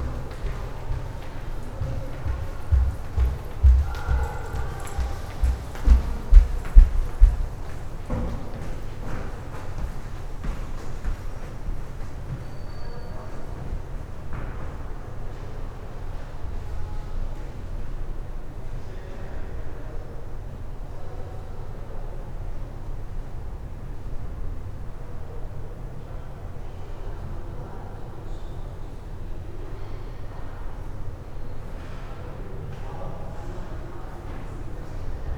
Light Travellers, Wilson Tunnel, Houston, Texas - James Turrell, The Light Inside, Wilson Tunnel, MFAH
Binaural: People walking through the underground tunnel that houses James Turrell's 'The Light Inside', and links the MFAH's two buildings.
Laughing, talking, footsteps, neon buzz, AC
CA14 omnis > Tascam DR100 MK2
TX, USA